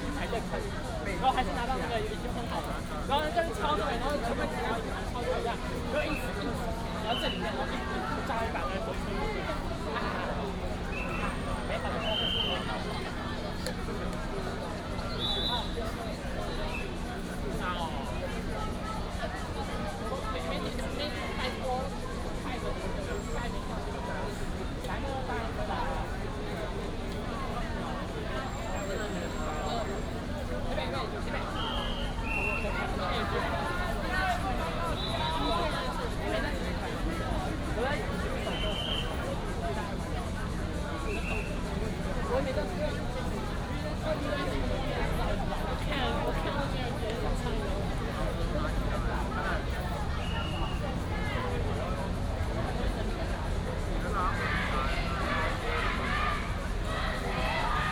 Ministry of Education, Taiwan - Protest
Protest march, High school students in front of the Ministry of Education to protest the government illegal
Zhongzheng District, Taipei City, Taiwan, 5 July 2015, ~18:00